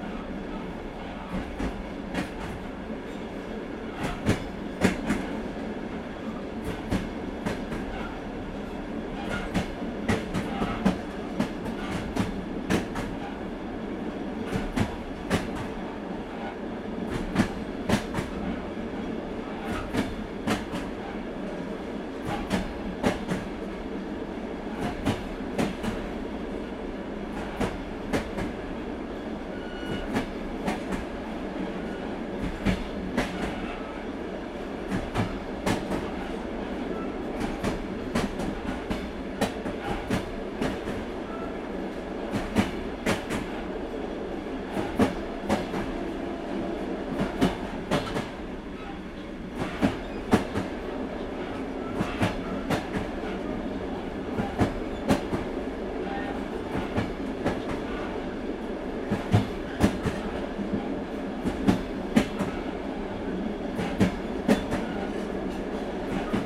tambour train, Arkhangelsk Region, Russia - tambour train
tambour train
Recorded on Zoom H4n.
В тамбуре поезда.